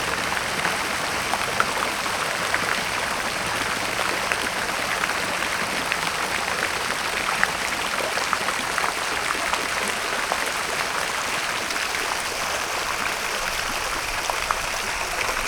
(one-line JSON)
{"title": "Śródmieście Północne, Warszawa - Fontanna Palac Mlodziezy", "date": "2013-08-21 10:45:00", "description": "Fontanna Palac Mlodziezy w Pałac Kultury i Nauki, Warszawa", "latitude": "52.23", "longitude": "21.00", "altitude": "115", "timezone": "Europe/Warsaw"}